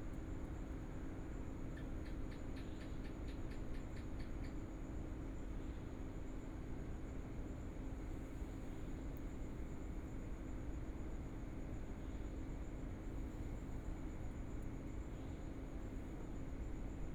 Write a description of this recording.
Late night on the street, Traffic sound, In front of the convenience store